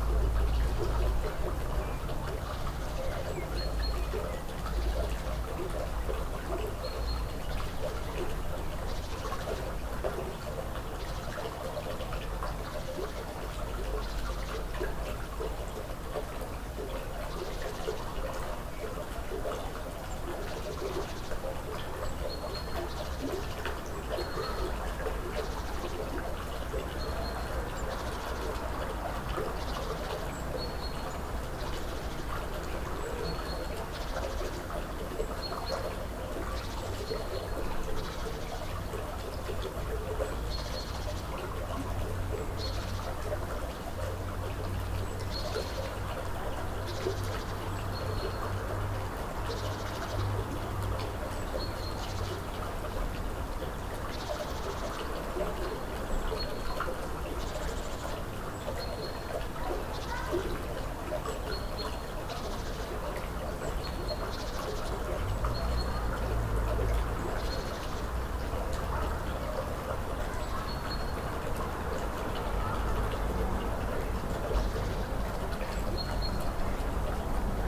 Ein Tag an meinem Fenster - 2020-03-23
23.03.2020
Die Bundesregierung erlässt eine Verordnung über vorübergehende - zunächst bis 19.04.2020 - geltende Ausgangsbeschränkungen zur Eindämmung der Verbreitung des neuartigen Coronavirus SARS-CoV-2 in Berlin.
Das Klangumfeld wird sich ändern, gewaltig.
Dies ist ein Versuch einer Dokumentation...
Tag 1
heima®t - eine klangreise durch das stauferland, helfensteiner land und die region alb-donau